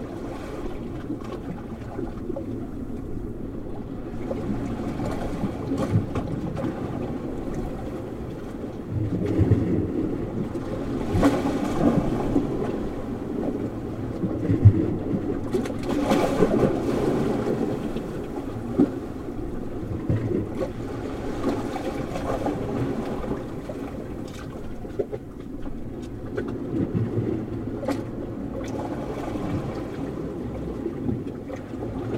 Fleury, France - recording in the rocks
recording the sea in the rocks
Captation ZoomH6
France métropolitaine, France, 26 December 2021, 16:20